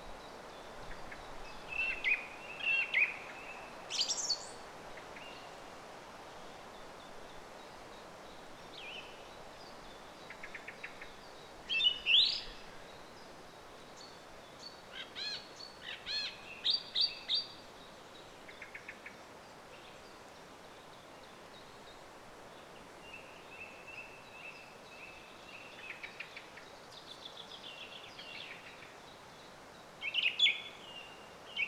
Lithuania, birds at the villa
they are everywhere...
14 May 2011, 15:00